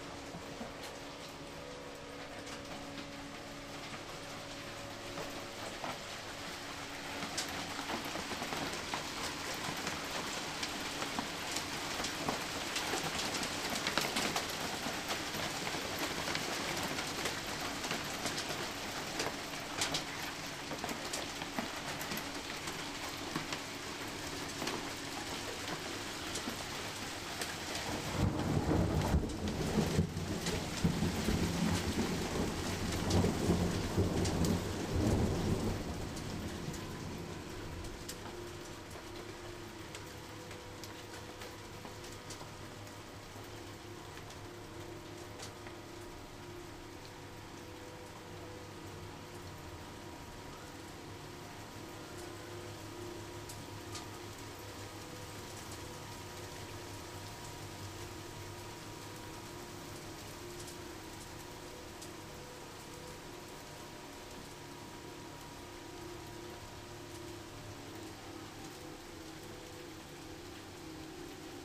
1919 7th street Berkeley
sound of ice hail, passing train and storm, all of that while listening Crawl Unit / Drone 2